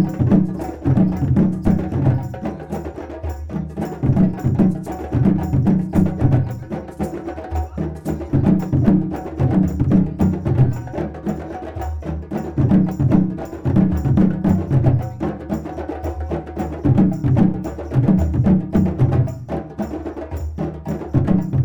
During the annual feast of Court-St-Etienne called the braderie, some people were freely gathered in this blind alley and played djembe. This is mandingue music, coming from west cost Africa (Mali, Guinea). They play loudly and lot of people stop their walk in the flea market to listen to them. The troop is called 'Culture mandingue'.
Court-St.-Étienne, Belgium, 11 June 2016